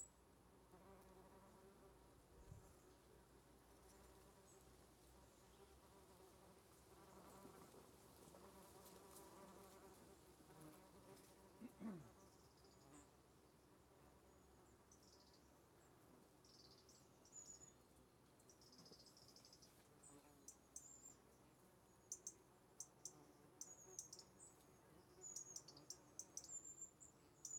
Gresham House, Calstock Rd, Gunnislake, UK - Wasps and Birds in an Apple Tree
In the garden of a 19th century mine captain's home in the Tamar Valley, there is an apple tree. I placed a ZOOM Q2HD microphone face-up underneath the tree.